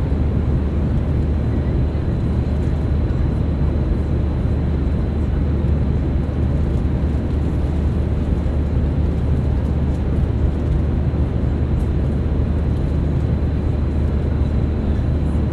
in the Ryanair plane
In the Ryanair Boeing plane traveling from Billund to Vilnius...Sennheiser Ambeo smart headset